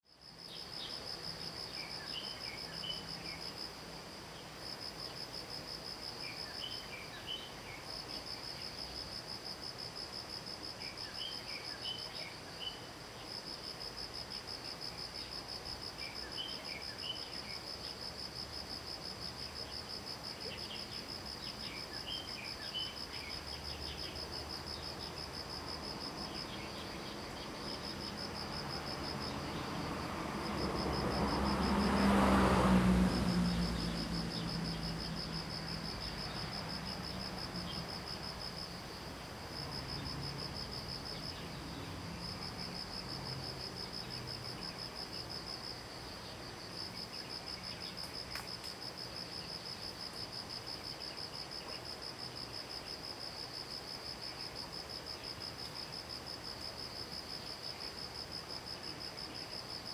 Taomi River, 埔里鎮桃米里 - Insect sounds
Early morning, Birds singing, Insect sounds
Zoom H2n MS+XY